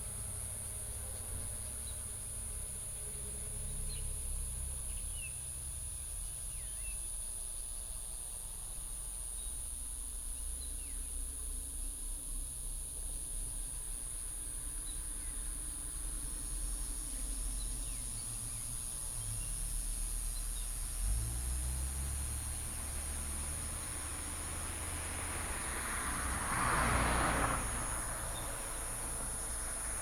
文德路一段, Xinpu Township - birds call and Traffic sound
birds call, Traffic sound, Insects, Cicadas
17 August 2017, 09:09